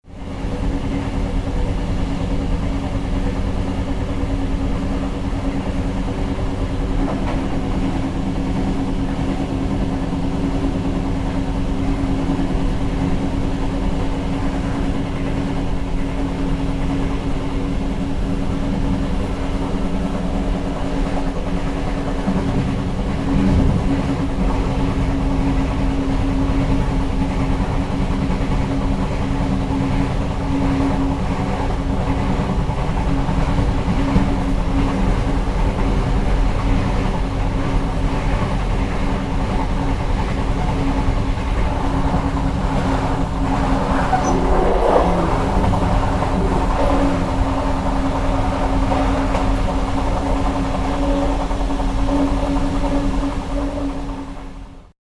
train from munich to zurich, near buchloe
recorded june 7, 2008. - project: "hasenbrot - a private sound diary"